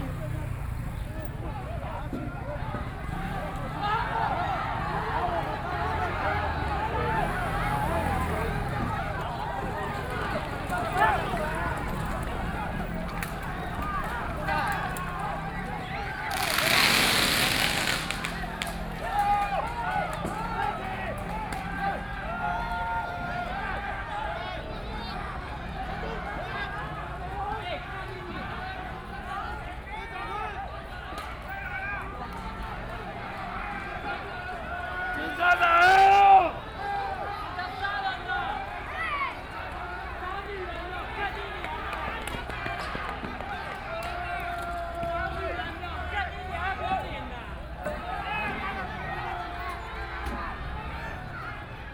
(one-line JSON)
{"title": "中正區梅花里, Taipei City - Government dispatched riot police", "date": "2014-03-24 06:07:00", "description": "government dispatched police to deal with students, Protest, University students gathered to protest the government, Occupied Executive Yuan\nRiot police in violent protests expelled students, All people with a strong jet of water rushed, Riot police used tear gas to attack people and students", "latitude": "25.05", "longitude": "121.52", "altitude": "15", "timezone": "Asia/Taipei"}